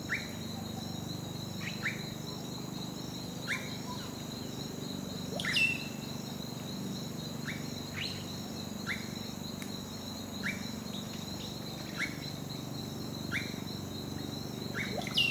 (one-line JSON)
{"title": "Villa Tunari, Bolivia - Birds on Villa Tunari", "date": "2007-01-20 17:00:00", "description": "Couple of Birds singing in Villa Tunari", "latitude": "-16.97", "longitude": "-65.42", "altitude": "310", "timezone": "America/La_Paz"}